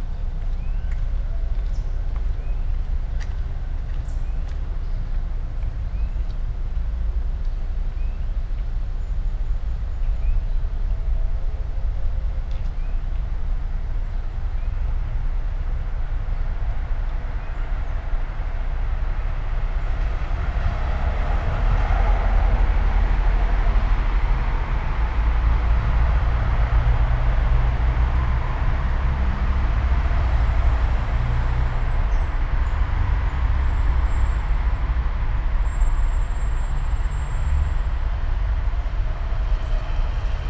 all the mornings of the ... - aug 12 2013 monday 6:48